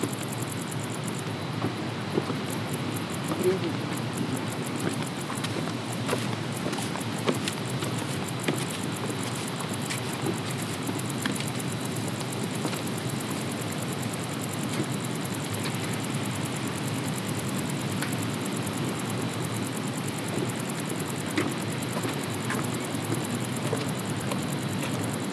Neringos sav., Lithuania - On the Way to the Beach
Recordist: Raimonda Diskaitė
Description: On the way to the beach on the dunes. The recorder was placed on a wooden path. Grasshoppers, waves and tourists passing by. Recorded with ZOOM H2N Handy Recorder.
July 2016